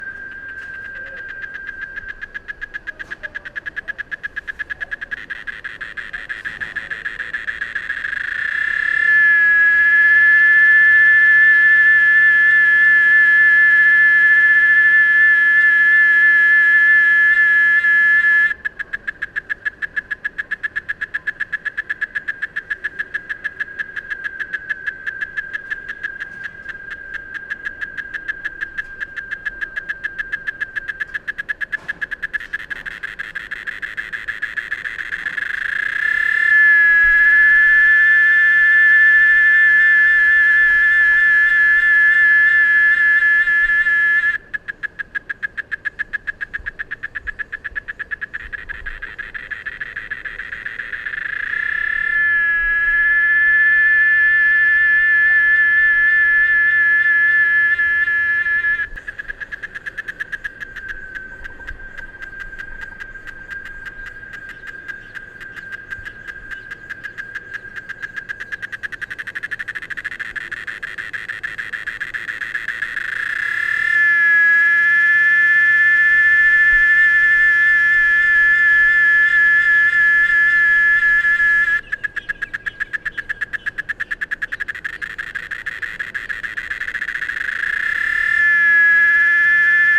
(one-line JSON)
{"title": "Novo Horizonte, Feira de Santana - BA, Brasil - canto da cigarra", "date": "2014-02-19 09:20:00", "description": "O áudio o canto da cigarra foi gravado no mes fevereiro de 2014, no campus da Universidade Estadual de Feira.Foi utilizado para captação um microfone Sennheiser ME66, com abafador de vento, vara e uma câmera marca sony, modelo Z7, com duas entradas xlr de áudio e fone de ouvido.", "latitude": "-12.20", "longitude": "-38.97", "altitude": "244", "timezone": "America/Bahia"}